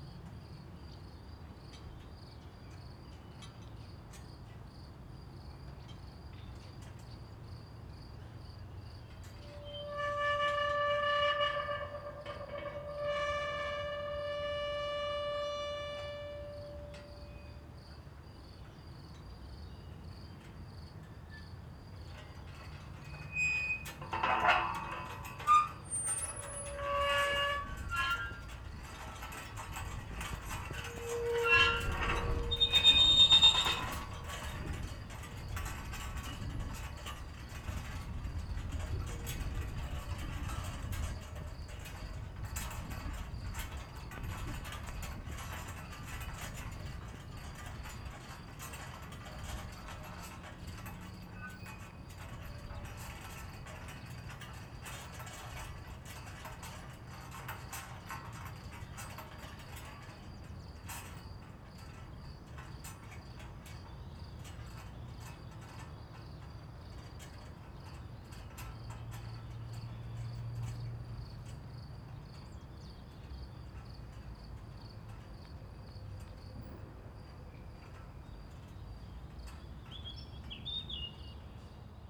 Maribor, Melje - flagpoles and wind
another one, more subtle variations of the rattling and squeeking flagpoles, some construction sound from far away, and more wind.
(tech: SD702, AT BP4025)